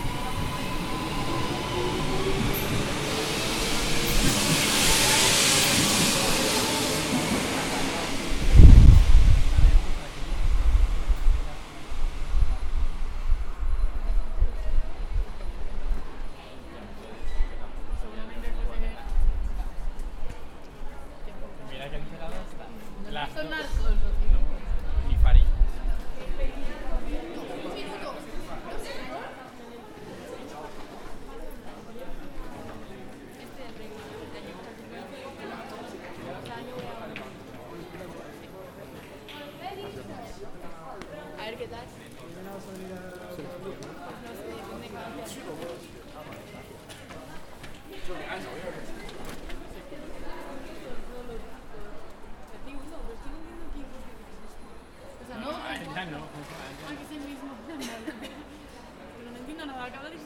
{"title": "Cantoblanco Universidad, Madrid, España - Train station", "date": "2018-12-06 19:35:00", "description": "It was recorded at the train station that is inside the university campus.\nIn this audio you can hear the voices of people talking and their steps. You can also hear the arrival of the train, the beep that indicates that the doors will be closed and finally the departure of the train.\nRecorded with a Zoom H4n.", "latitude": "40.54", "longitude": "-3.70", "altitude": "732", "timezone": "Europe/Madrid"}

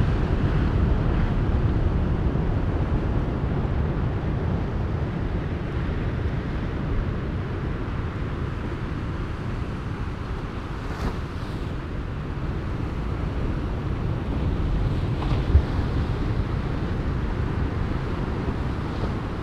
{"title": "Quobba Station, Gnaraloo Rd, Macleod WA, Australien - Indian Ocean at sunset", "date": "2012-05-16 18:39:00", "description": "The Roar of large waves breaking on the beach in a remote part of western Australia. In the beginning of the recording black oystercatchers can be heard calling as they fly along the coastline. Recorded with a Sound Devices 702 field recorder and a modified Crown - SASS setup incorporating two Sennheiser mkh 20 microphones.", "latitude": "-24.40", "longitude": "113.40", "altitude": "8", "timezone": "Australia/Perth"}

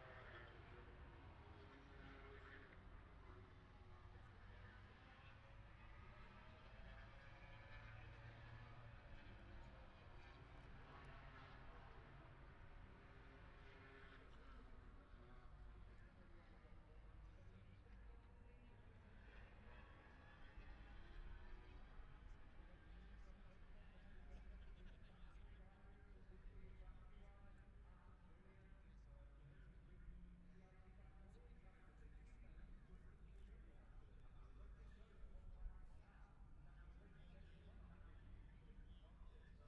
{"title": "Silverstone Circuit, Towcester, UK - british motorcycle grand prix 2021 ... moto grand prix ...", "date": "2021-08-28 13:30:00", "description": "moto grand prix free practice four ... wellington straight ... dpa 4060s to Zoom H5 ...", "latitude": "52.08", "longitude": "-1.02", "altitude": "157", "timezone": "Europe/London"}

